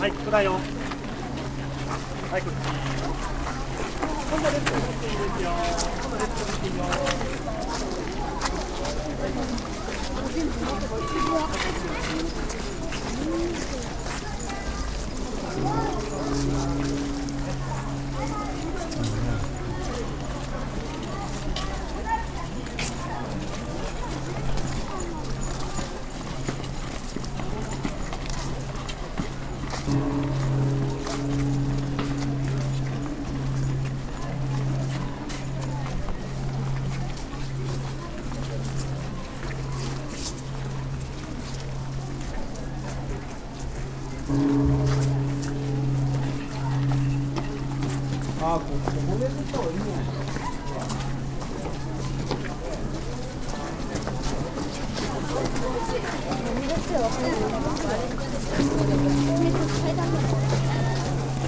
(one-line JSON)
{"title": "nikkō, tōshō-g shrine, walkway", "date": "2010-08-22 16:35:00", "description": "moving on the walkway from the shrine approaching the monk temple listening to the temple bell coming closer\ninternational city scapes and topographic foeld recordings", "latitude": "36.76", "longitude": "139.60", "timezone": "Asia/Tokyo"}